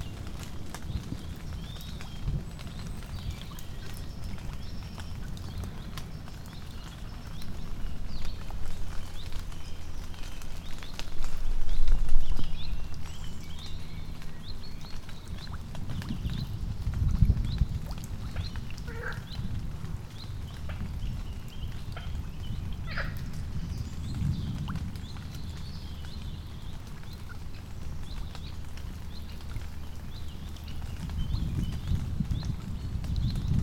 Recording around a lake during a storm. We observed during the recording thousand of baby frog around us.
made by Martiño y Madeleine
28 mai 2018 14h34
recorded with PCM D-100